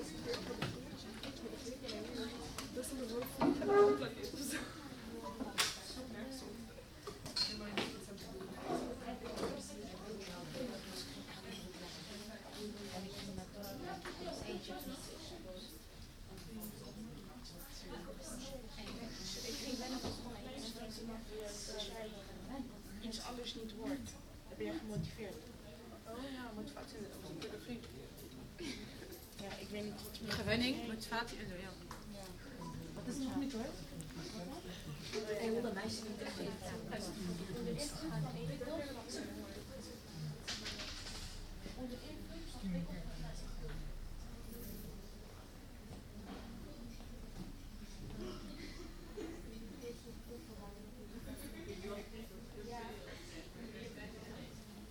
Uilebomen, Den Haag, Nederland - Conversations in the library
People talking in our Central Public Library.
Nederland, European Union